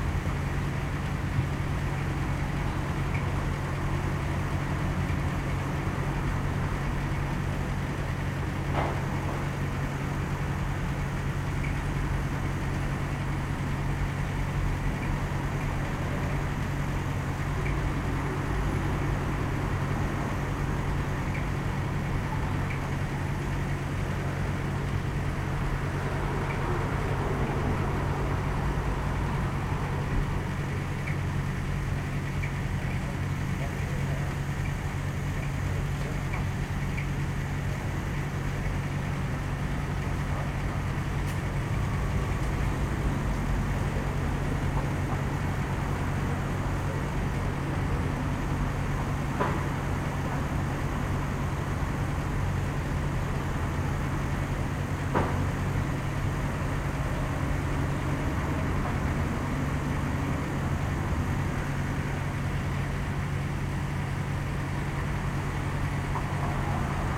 Edvard Rusjan square, Nova Gorica, Slovenia - White noise
The sound of an air conditioning mixed with the sounds from the street.